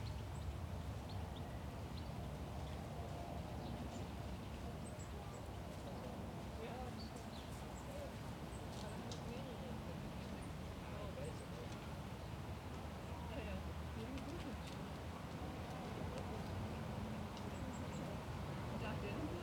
Grüntaler Straße, Soldiner Kiez, Wedding, Berlin, Deutschland - Grüntaler Straße 57a, Berlin - Soldiner Kiez' quiet corner next to Prenzlauer Berg
Grüntaler Straße 57a, Berlin - Soldiner Kiez' quiet corner next to Prenzlauer Berg. Besides faint noises from an allotment colony there are only some passers-by to be heard and the S-Bahn (urban railway) at regular intervals.
[I used the Hi-MD-recorder Sony MZ-NH900 with external microphone Beyerdynamic MCE 82]
Grüntaler Straße 57a, Berlin - Eine stille Ecke im Soldiner Kiez in direkter Nachbarschaft zum Prenzlauer Berg. Außer gedämpften Arbeitsgeräuschen aus der nahen Kleingartenkolonie sind hier nur gelegentlich Passanten zu hören, und natürlich die S-Bahn in regelmäßigen Abständen.
[Aufgenommen mit Hi-MD-recorder Sony MZ-NH900 und externem Mikrophon Beyerdynamic MCE 82]